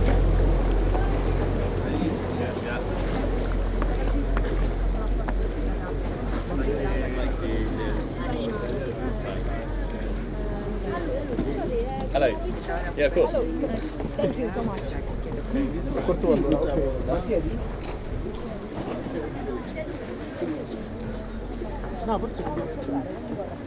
Brugge, België - Crowd of tourists
An impressive crowd of tourists and the departure of the countless boats that allow you to stroll along the canals of Bruges.
Brugge, Belgium, 16 February 2019